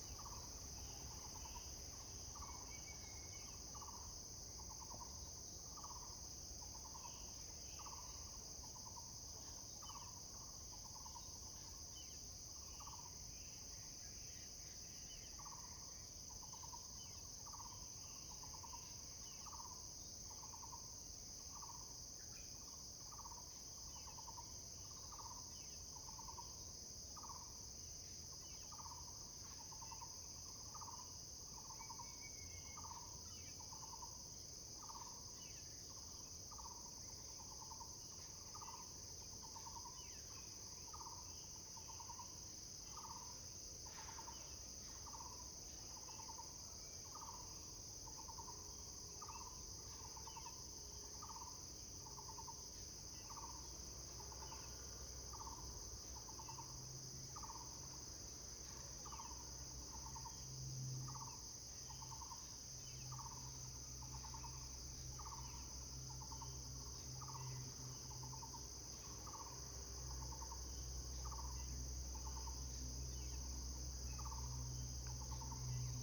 新珊路, Baoshan Township, Hsinchu County - Birds and high-speed train
Near the high-speed railway, Birds sound, traffic sound, Zoom H2n MS+XY